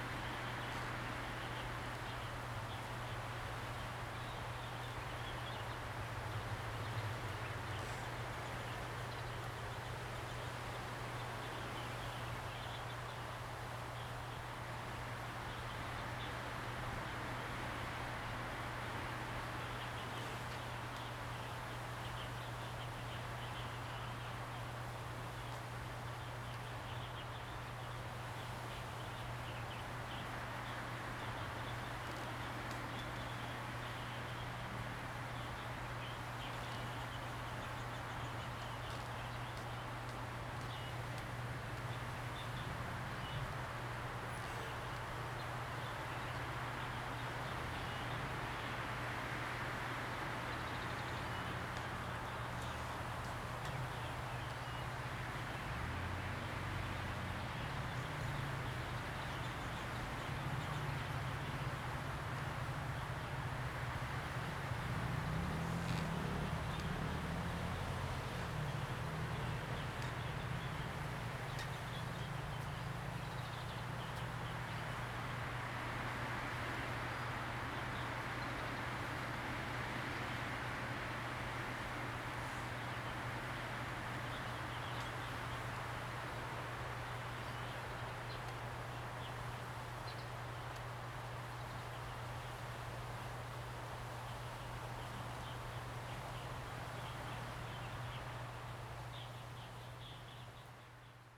Kinmen County, Taiwan - Beef Wood
Birds singing, Wind, In the woods, Beef Wood
Zoom H2n MS+XY
福建省, Mainland - Taiwan Border, 3 November